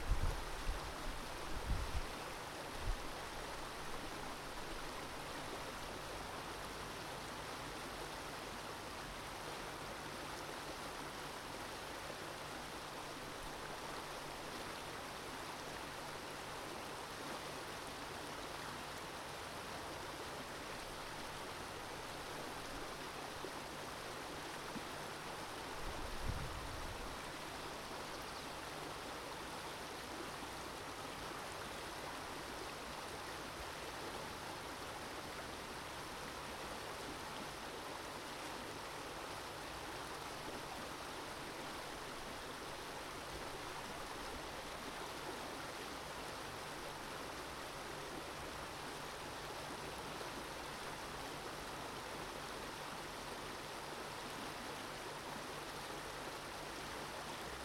Władysława Łokietka, Gorzów Wielkopolski, Polska - Kłodawka river.
Little cascade on the Kłodawka river.
województwo lubuskie, Polska, 15 February 2020, ~13:00